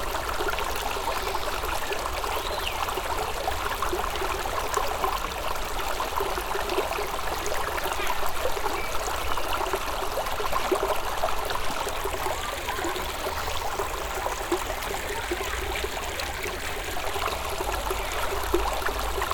troisvierges, small stream
The sound of a small vivid stream running here under the shadow of some bigger trees. In the distance a passing train.
Troisvierges, Kleiner Fluss
Das Geräusch von einem kleinen lebhaften Bach, der hier im Schatten von etwas größeren Bäumen fließt. In der Ferne ein vorbeifahrender Zug.
Troisvierges, petit ruisseau
Le son d’un petit ruisseau vif coulant ici à l’ombre de gros arbres. Dans le lointain, on entend un train qui passe.
Project - Klangraum Our - topographic field recordings, sound objects and social ambiences